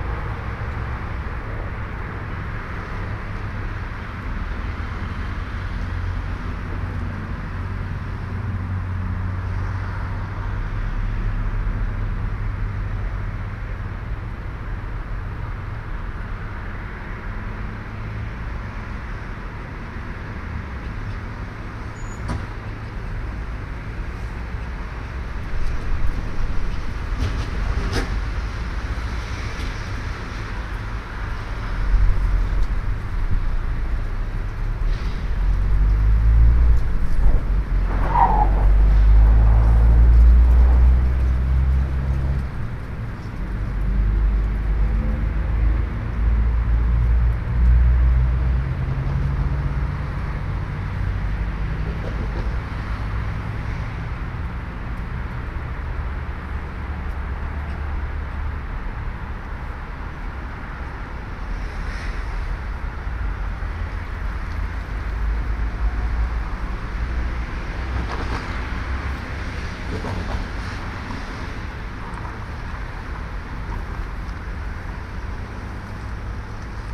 Binaural recording of train platform with rare wind swooshes through the platform shed.
Recording made with Soundman OKM on Olympus LS-P4.
województwo dolnośląskie, Polska